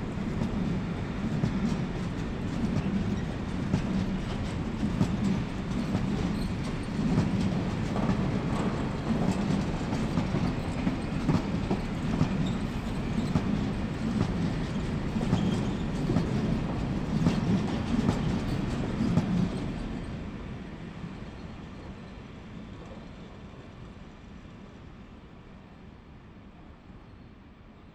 Rijeka, Croatia, Railway Station, Cargo - From Standby
23 July, 21:59